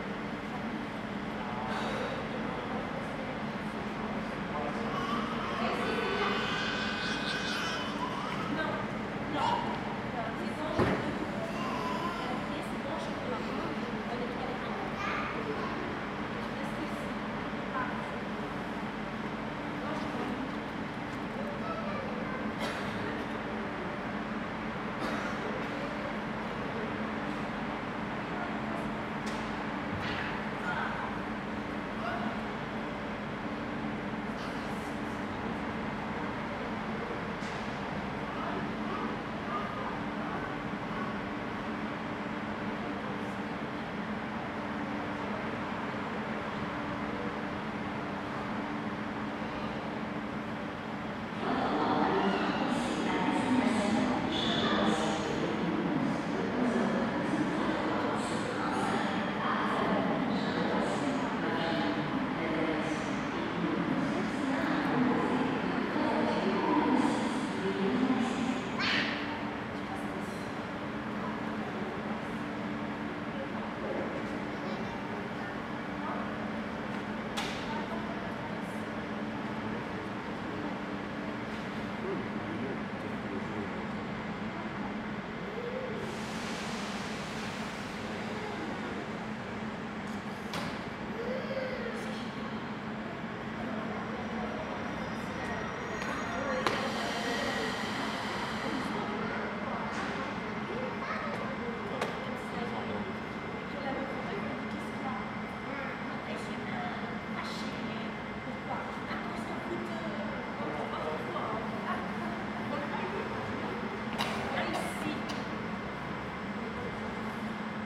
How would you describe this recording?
Platform ambience, voices, trains passing by. Tech Note : Sony PCM-D100 internal microphones, wide position.